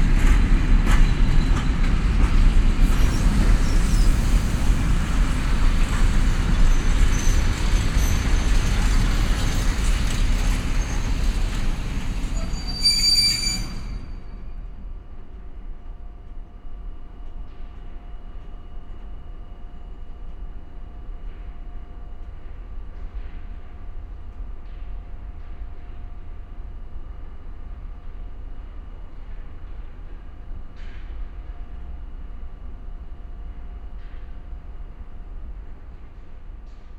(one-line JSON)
{"title": "cargo train terminal, Ljubljana - train arrives and stops", "date": "2012-11-07 11:50:00", "description": "a freight train arrives and stops in front of me, producing very heavy low frequencies\n(Sony PCM-D50, DPA4060)", "latitude": "46.06", "longitude": "14.54", "altitude": "290", "timezone": "Europe/Ljubljana"}